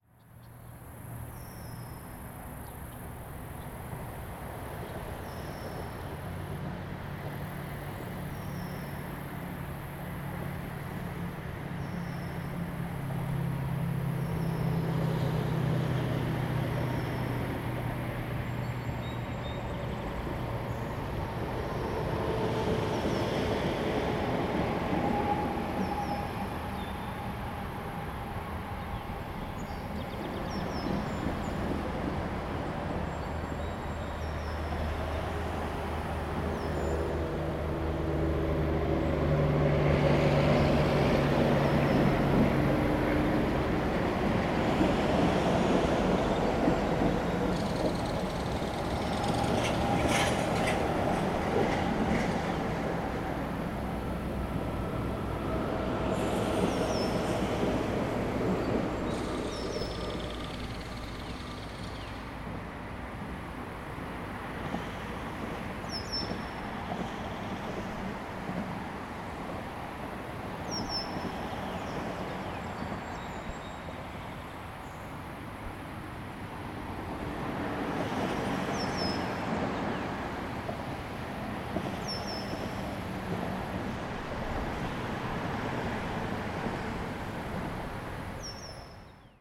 Black River, South Haven, Michigan, USA - Black River
Ambient recording from packraft while floating the Black River towards Gerald Ford Freeway.